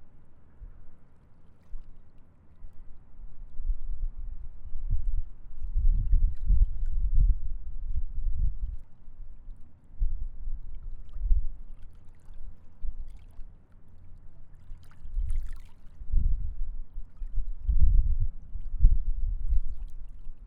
Overhoeksparklaan, Amsterdam, Nederland - Wasted Sound Quay
Wasted sound along 't IJ.
Noord-Holland, Nederland, 30 October